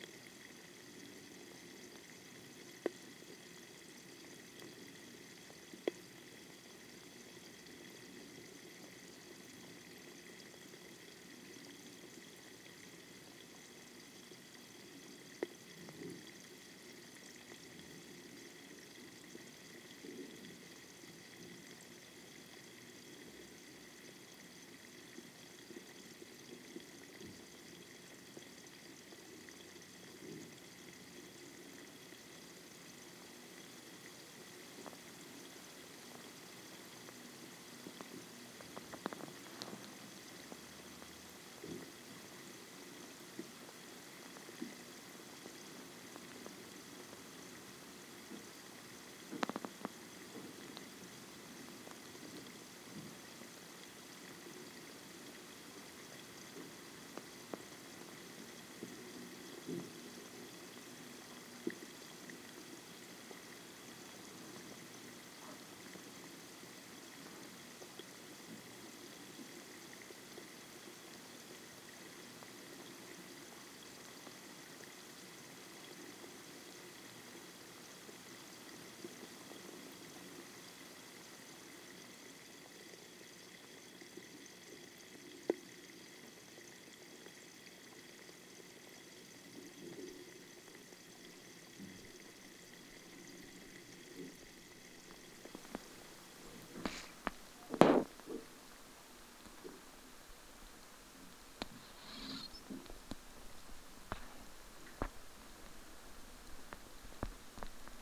막걸리 만들기 과정 (시작 96시 후에) Rice wine fermentation (4th day) - 막걸리 만들기 과정 (시작 96시 후에)Rice wine fermentation (4th day)
막걸리 만들기 과정_(시작 96시 후에) Rice wine fermentation (4th day)